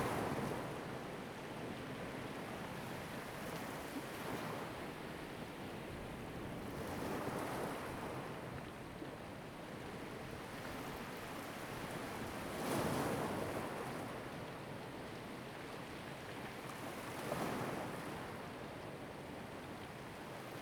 Jiayo, Koto island - sound of the waves
On the coast, sound of the waves
Zoom H2n MS +XY